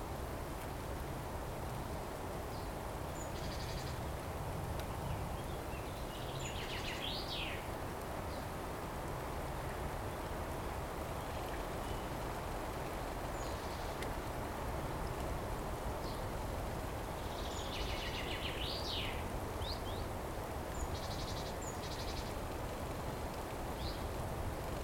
Malá Hraštice, Malá Hraštice, Czechia - Forest ant hill
The buzzing sound of a busy spring anthill. Birds singing.
Recorded with Zoom H2n, 2CH stereo, deadcat.